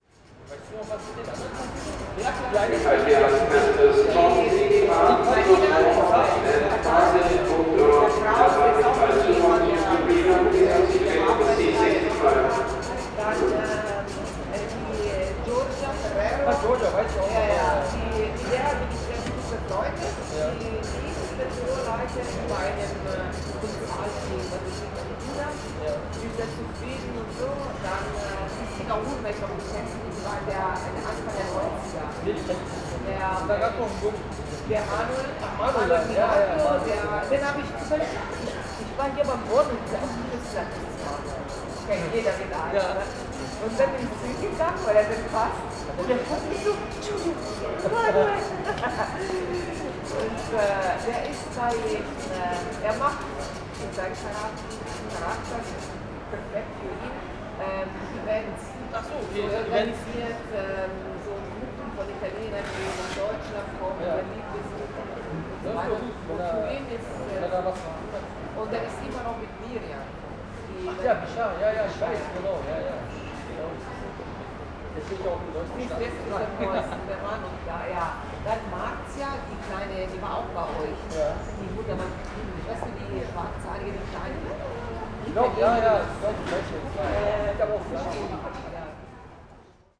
Flughafen Tegel, Berlin Tegel Airport (TXL), Terminal C - dot matrix
a group of airport staff talking at the gate entrance. a needle printer buzzing and spewing out long band of paper.
Berlin, Germany, 3 April, 09:22